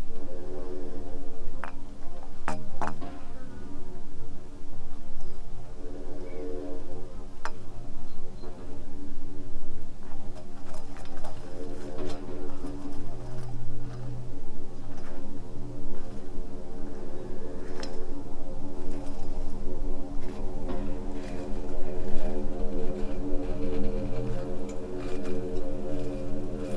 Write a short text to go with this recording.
abandoned quarry near small village Marušići, many big prominent houses in Venice, Vienna and around are build with these beautiful white stones ... many test holes were here ones, I found only one of it now, others are stuffed with sand- day 1